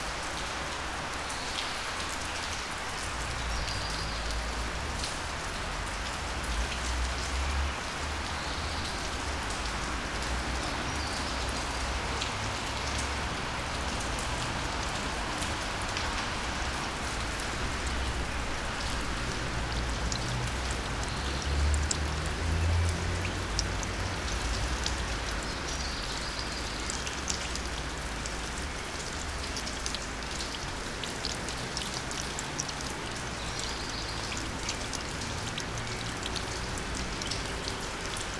Maribor, Vodnikov Trg, market - rain on monday afternoon